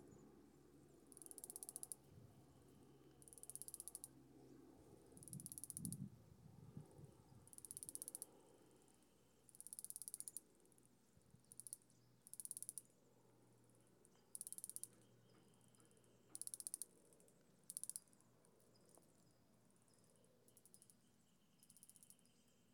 SMIP RANCH, D.R.A.P., San Mateo County, CA, USA - Clicks
Recording of clicking sounds by insects
28 May 2014